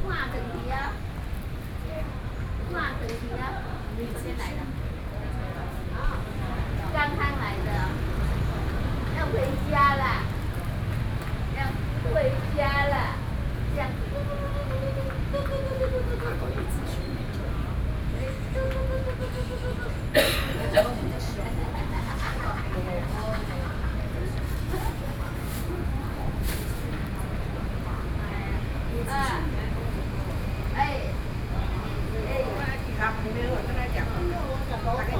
Wenzhou Park, Da’an Dist. - A group of old woman in the park
A group of old woman in the park
Zoom H4n+ Soundman OKM II
Da’an District, Taipei City, Taiwan, 28 June 2012, 17:53